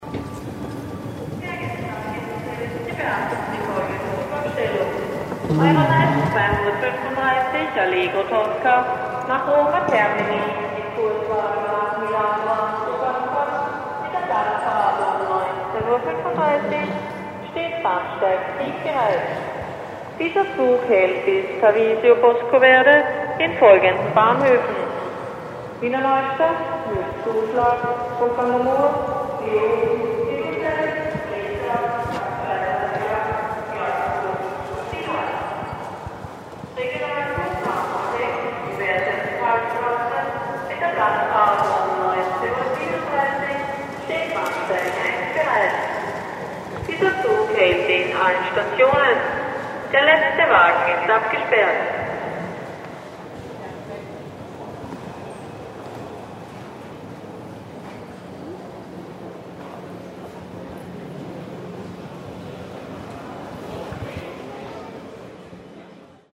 vienna, südbahnhof - vienna, suedbahnhof
cityscape vienna, in the south railwaystation, announcements, people - recorded summer 2007, nearfield stereo recordings
international city scapes - social ambiences and topographic field recordings